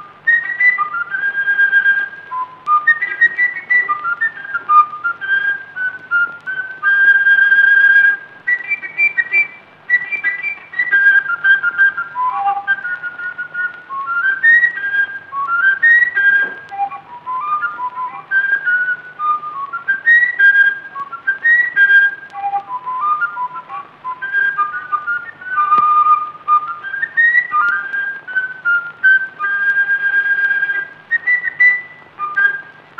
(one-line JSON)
{"title": "femme fatale at elektra - Goin' To Leave You Blues - Big Boy Cleveland", "latitude": "50.95", "longitude": "6.96", "altitude": "55", "timezone": "GMT+1"}